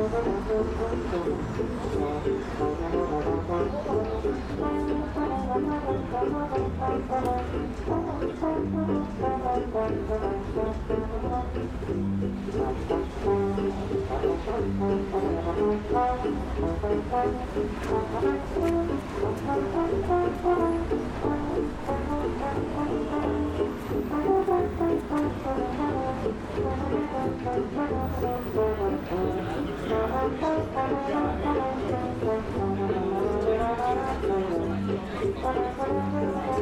Zwei Strassenmusiker am Eingang zur U-Bahnhaltestelle Eberswalder Straße